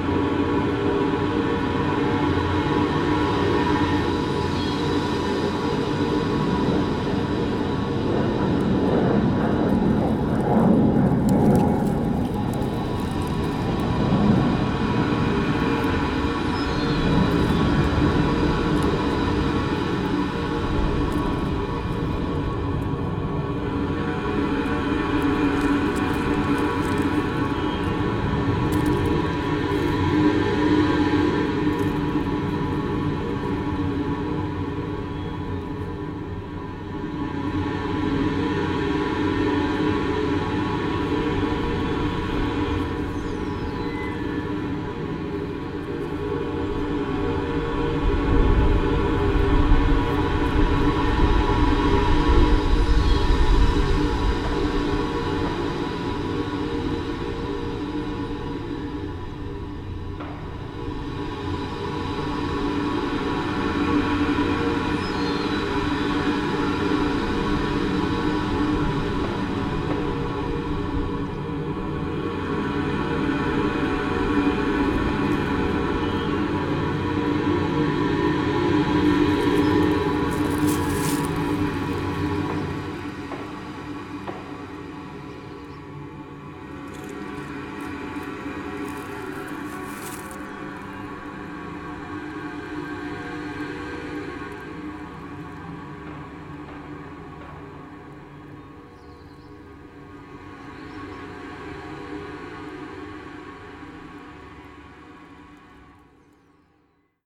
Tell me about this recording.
Dvoučinný parní stroj firmy Ringhoffer Praha - Smíchov zakoupila na Světové výstavě v Paříži v roce 1905 Pražská železářská společnost. Na šachtě Mayrau ve Vinařicích u Kladna byl instalován a v provozu až do roku 1994. Je poháněn elektromotorem. Zvuk byl nahrán u venkovní zdi u železné roury, která odváděla stlačený vzduch z pístů. Do dýchání stroje zní letadlo a ptačí zpěv.